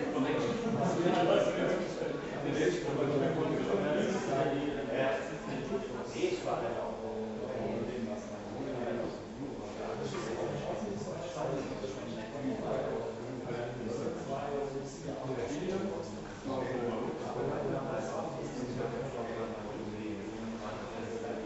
Weingarten, Deutschland - Waiting at the foyer
Waiting for an event to start, drinking a beer and watching the scene
glas, noise, speaking, people, waiting, background, talking
Weingarten, Germany, 29 November